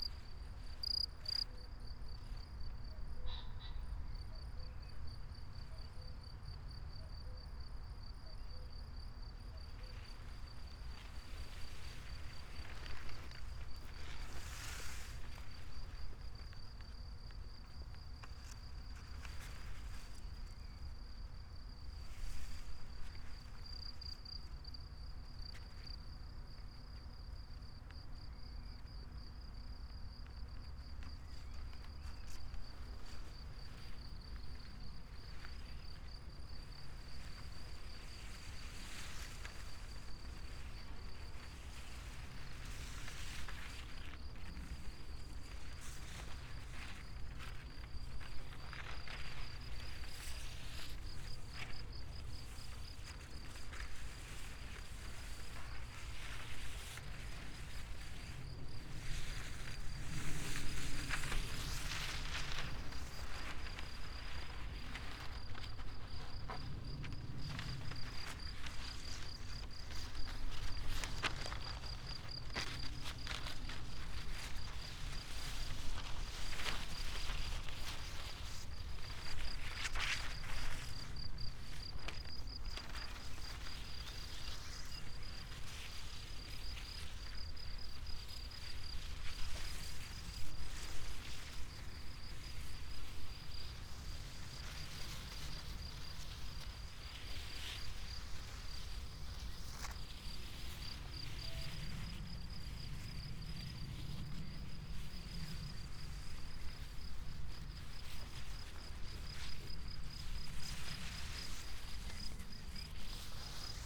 path of seasons, Piramida, Maribor, Slovenia - silence of written words
walk with two long strips of thin paper, covered with written words, crickets, flies, birds, wind through paper and grass ears
Vzhodna Slovenija, Slovenija, 8 June 2013